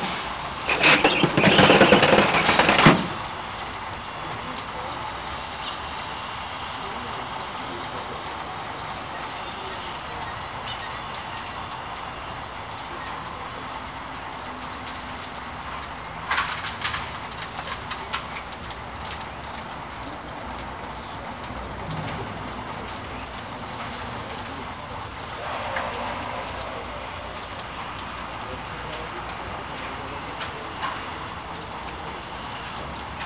a worker forgot to shut down the water flow a few stories up on a scaffolding. water dribbling down the structure, splashing on tools, buckets, trash and flooding the ground. at one point storekeeper suddenly opens the blind of his booth.
Poznan, Poland, 17 June 2014